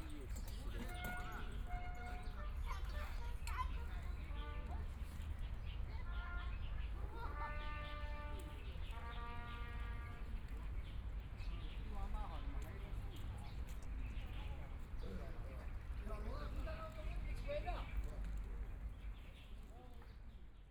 Yangpu Park, Shanghai - Walking through the park
Walking through the park, A group of middle-aged man playing cards, People are walking, In practice the trumpet whole person, Binaural recording, Zoom H6+ Soundman OKM II
Yangpu, Shanghai, China, 26 November 2013